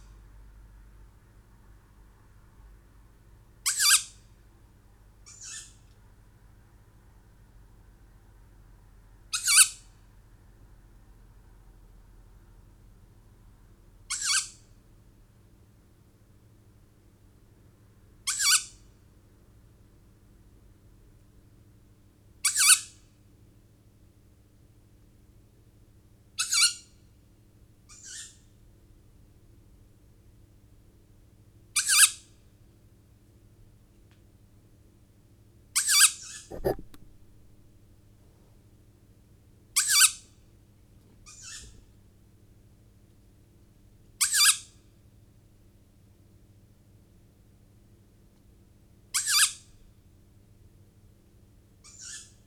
tawny owl fledglings ... two birds ... dpa 4060s in parabolic to SD 702 ...